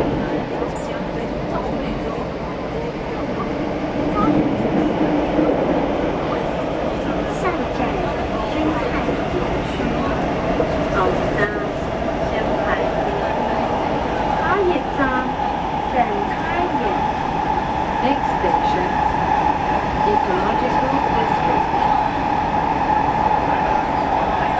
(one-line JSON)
{"title": "Zuoying District, Kaohsiung - inside the Trains", "date": "2012-02-25 17:17:00", "description": "from Kaohsiung Arena Station to Ecological District Station, Sony ECM-MS907, Sony Hi-MD MZ-RH1", "latitude": "22.67", "longitude": "120.30", "altitude": "13", "timezone": "Asia/Taipei"}